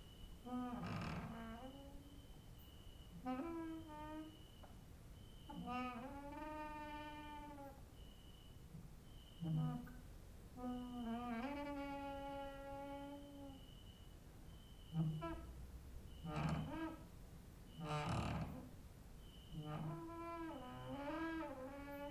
cricket outside, exercising creaking with wooden doors inside
Maribor, Slovenia, August 2012